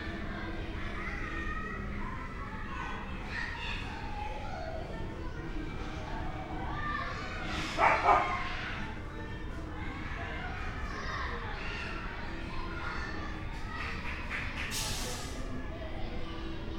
R. das Flores de Santa Cruz, Lisboa, Portugal - Escola Básica, basic school, ambience
Lisbon, near Castelo San Jorge, sound of kids playing in nearby school yard, street ambience (Sony PCM D50, DPA4060)